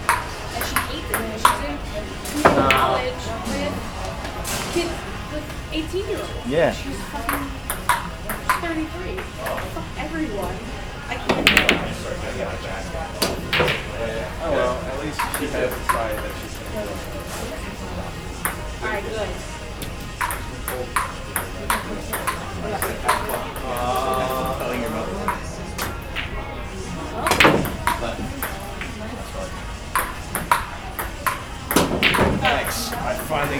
Student Center, The College of New Jersey, Pennington Road, Ewing Township, NJ, USA - Game Room
Recording of the chatter in the game room at the TCNJ Stud.